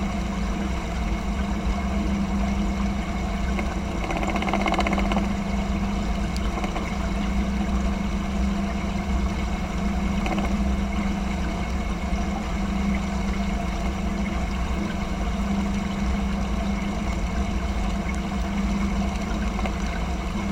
nearby Milloja
Fresh ground water enters the oil shale mine and becomes polluted. Every year 25000m3 gets pumped out here, flowing into Milloja sediment lake nearby. Recorded with contact microphones from a pipe sticking out of the ground under the actual water pipes.